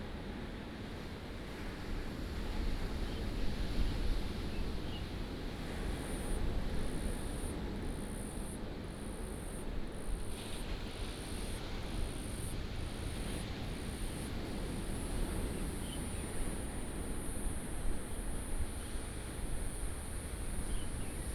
On the coast, Bird sound, Sound of the waves, Traffic sound
Manzhou Township, Pingtung County, Taiwan, 23 April, 11:01